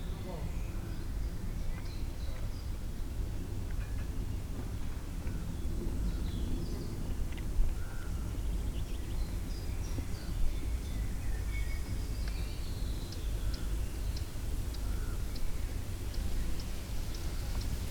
Sasino, summerhouse at Malinowa Road - relaxing on a chair
ambience in the yard captured by recorder on the table. serene atmosphere of sunny summer afternoon. birds chirping on the trees around and in the distant forest. neighbor drilling with his tools. insects buzzing. rustle of a newspaper on the table. picking up a cup and fruits from the table. plane roar exactely every three minutes appart. clang of the chair body when adjusting. (roland r-07)